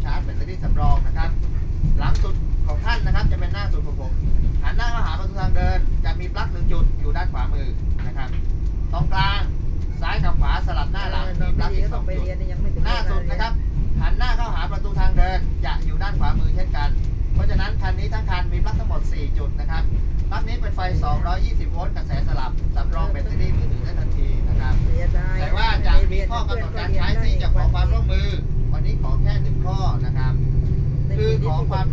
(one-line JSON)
{"title": "Wat Phong Benchaphat, Tambon Khao Noi, Amphoe Pran Buri, Chang Wat Prachuap Khiri Khan, Th - Zug nach Surathani Unterweisung", "date": "2017-08-05 12:15:00", "description": "In the train from Bangkok to Surathani the conductor is explaning something in quite some length (5 min.?) directly (not via intercom) to the travelers. I the end his translation for me says: no smoking.", "latitude": "12.39", "longitude": "99.93", "altitude": "9", "timezone": "Asia/Bangkok"}